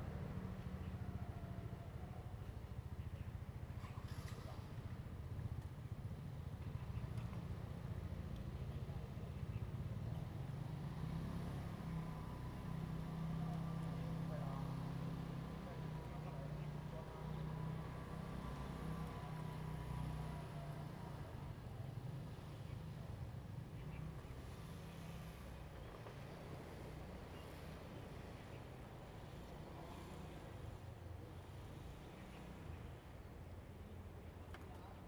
Birds singing, Chicken sounds, Small fishing port
Zoom H2n MS+XY
Liuqiu Township, Pingtung County, Taiwan, 2 November, 08:43